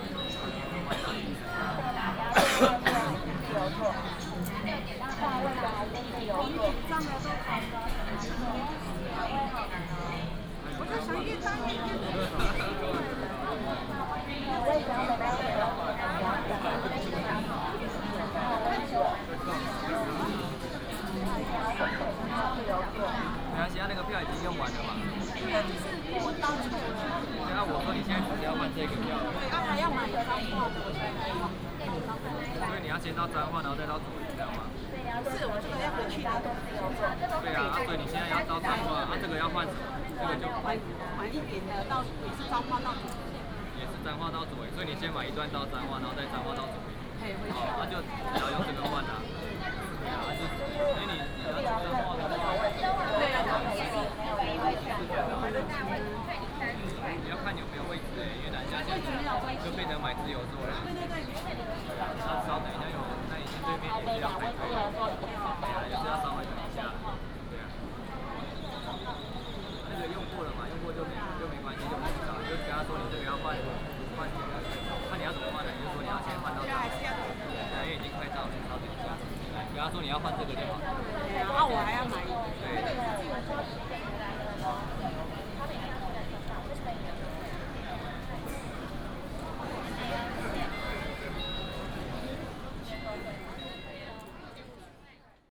HSR Taichung Station, Taiwan - Ticket counter at the station
Ticket counter at the station, Station message broadcast
Binaural recordings, Sony PCM D100+ Soundman OKM II
Taichung City, Wuri District, 站區一路(二樓大廳層), 17 February 2018, 09:47